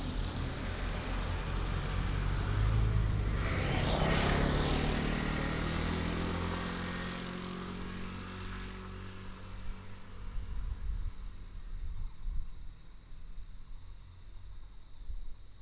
199縣道7.5K, Mudan Township - Bird and Insect sound
Bird song, Insect noise, Small mountain road, Close to the Grove, traffic sound
Binaural recordings, Sony PCM D100+ Soundman OKM II
2 April, 11:02